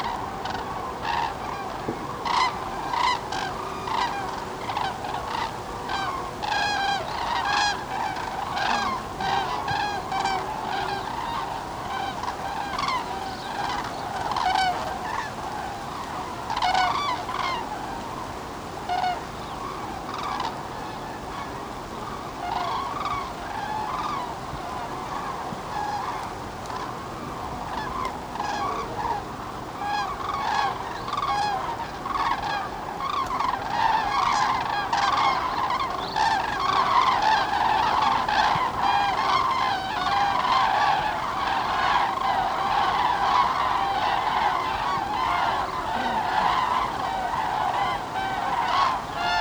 During their autumn migration northern Europe's cranes gather in hundreds of thousands at Linum to feed up before continuing their journey southwards. They are an amazing sight. Puctually, at dusk, flocks of up to 50 birds pass overhead in ever evolving formations trumpeting as they go. Equally punctual, herds of human birdwatchers turn up to see them, chatting to each other and murmuring on their phones. The Berlin/Hamburg motorway is a kilometer away and Tegel airport nearby. The weather on this evening was rainy and yellowing poplar leaves were hissing in the wind. These are the sound sources for this recording.
Fehrbellin, Germany